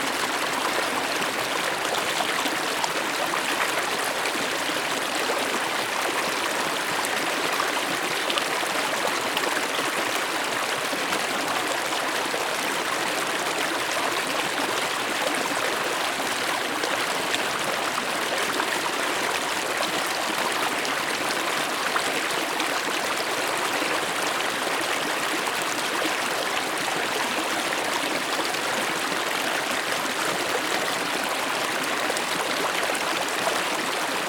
Unnamed Road, Oestrich-Winkel, Deutschland - Äpfelbach - Taunus
Plätschern des Äpfelbach im Taunus - Hinterwald
Oestrich-Winkel, Germany, 20 January 2019, 13:20